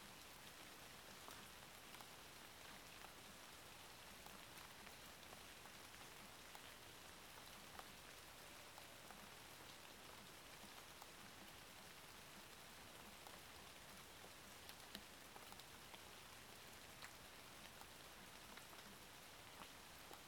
Vialard, Beaumont-en-Diois, France - Beaumont-en-Diois - Pluie
Beaumont-en-Diois (Drôme)
Ambiance d'automne -nuit - Pluie
France métropolitaine, France, 26 October